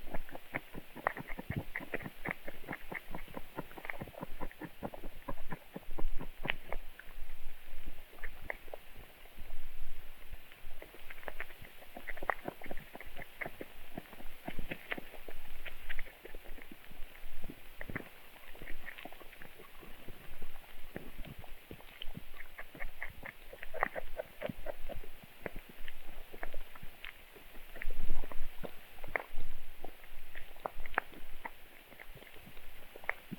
Stabulankiai, Lithuania, tadpoles underwater
young tadpoles churning/chewing in a swamp. hydrophone recording. very silent sounds, so recording gain was set to maximum - there's a lot of hiss, even on Sound Devices...